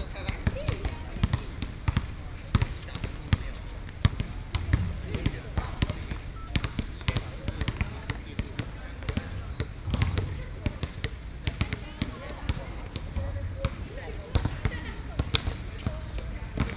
復興公園, 北投區, Taipei City - soundmap20121124-1
in the park, Play basketball, / Binaural Recordings